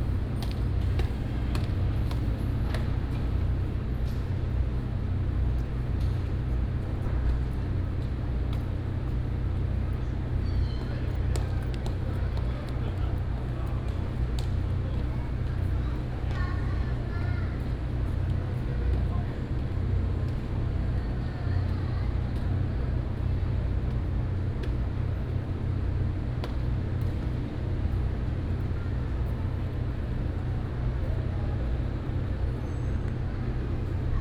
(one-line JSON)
{"title": "臺灣大學綜合體育館, Taipei City, Taiwan - In the stadium entrance", "date": "2015-07-25 19:29:00", "description": "In the stadium entrance, Sitting on ladder, Noise Generator, TV signal broadcast truck", "latitude": "25.02", "longitude": "121.54", "altitude": "23", "timezone": "Asia/Taipei"}